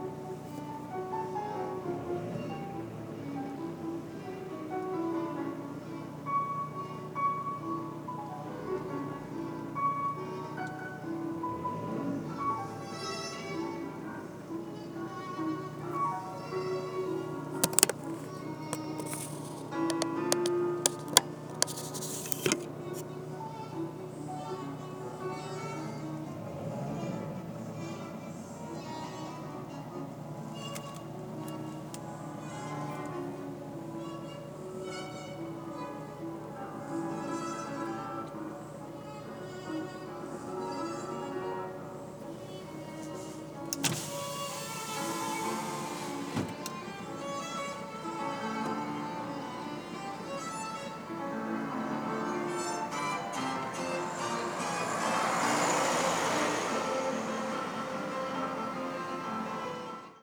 koepenick, orchestra, car window
Berlin, Germany, 26 October 2010